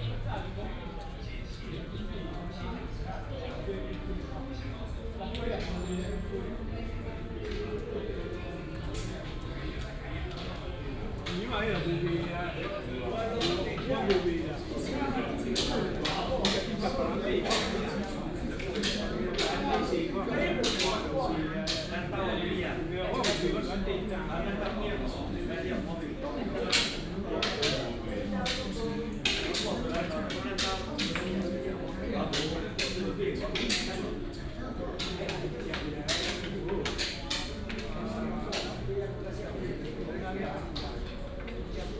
Elderly welfare gathering hall, A group of elderly are playing chess, Sing karaoke, Binaural recordings, Sony PCM D100+ Soundman OKM II